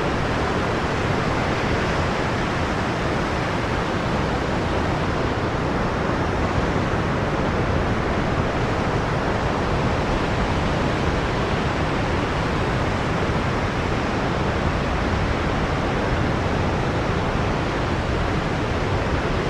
waves of Nida, strong surf
waves of Nida sea and water sounds